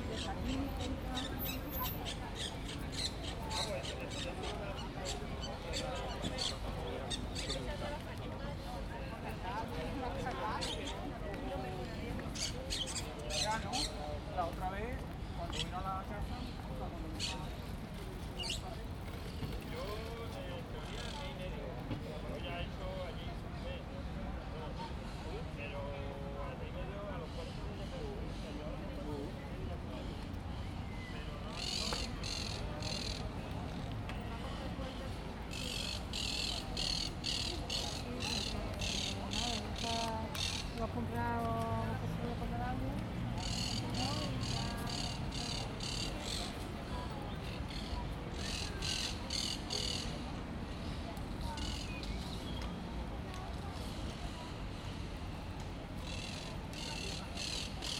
Centro Histórico, Málaga, Prowincja Malaga, Hiszpania - Malaga Birds
Even in the center of a bustling city, you can still hear a symphony of exotic birds. Recorded with Zoom H2n.